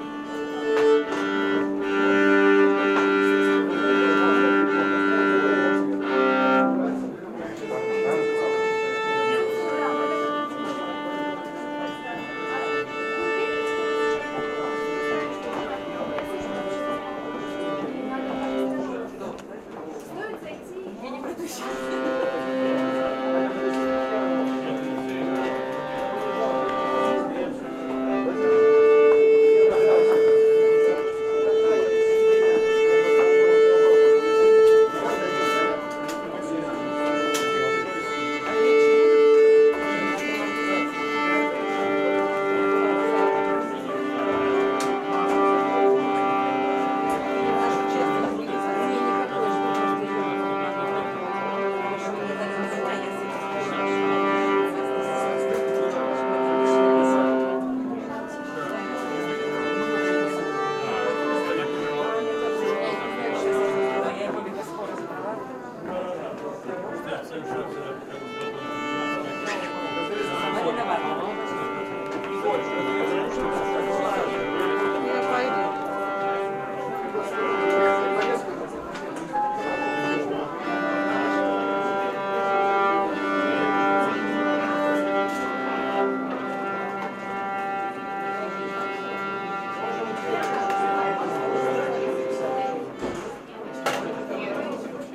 Moscow, Tchaikovsky concert hall - Backstage life
Backstage, Musicians rehearse, People talk